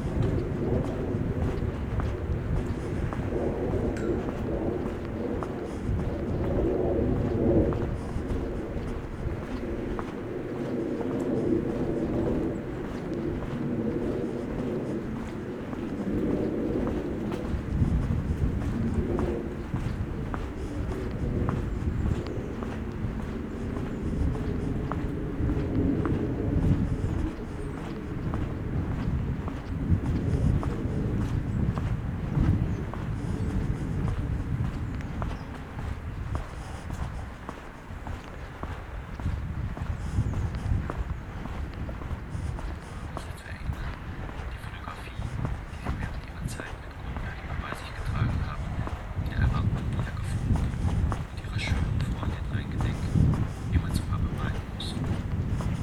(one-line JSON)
{"title": "burg/wupper: müngstener straße - bring it back to the people: miniatures for mobiles soundwalk", "date": "2012-11-27 13:10:00", "description": "miniatures for mobiles soundwalk (in a hurry)\na test walk through my miniature \"heimat, liebe\"; from müngstener straße to eschbachstraße\nbring it back to the people: november 27, 2012", "latitude": "51.14", "longitude": "7.14", "altitude": "117", "timezone": "Europe/Berlin"}